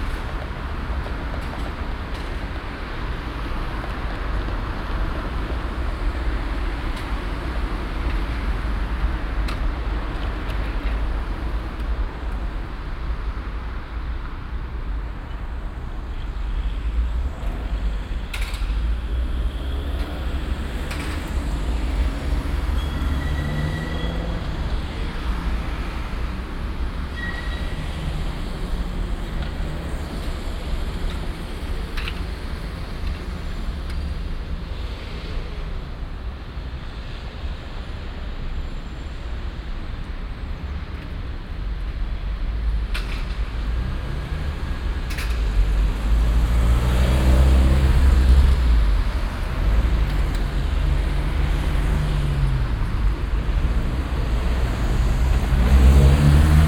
cologne, barbarossaplatz, verkehrsabfluss luxemburgerstrasse
strassen- und bahnverkehr am stärksten befahrenen platz von köln - aufnahme: morgens
soundmap nrw: